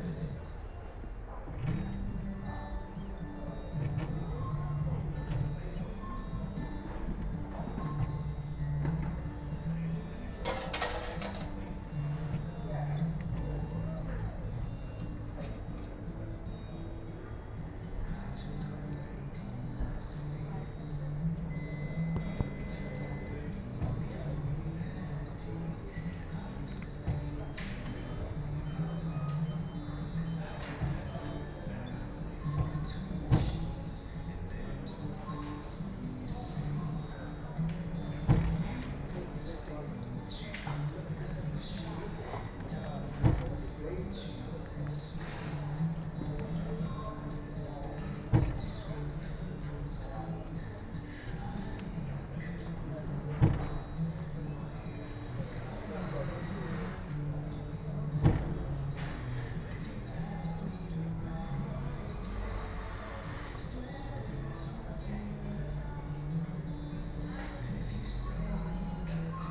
B&Q The Mere, Scarborough, UK - automated key cutter ...
automated key cutter ... stood there listening to music ... so used the LS 14 integral mics and recorded ... background noise from ... shelf stacker ... store announcements ... voices ... etc ... key plinks into the out tray at 2:28 approx ... it promptly shut down and did not produce the next key ... bird calls ... herring gull ...